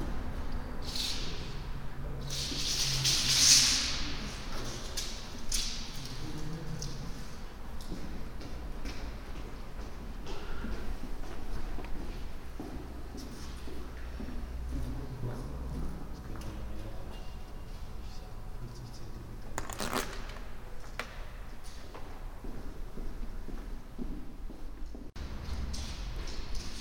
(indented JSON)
{
  "title": "bönen, förderturm, machine hall",
  "description": "inside the former coal mine tower hall - a big metal plate being moved, steps and the sound of a metal measure tape\nsoundmap nrw - social ambiences and topographic field recordings",
  "latitude": "51.59",
  "longitude": "7.75",
  "altitude": "70",
  "timezone": "Europe/Berlin"
}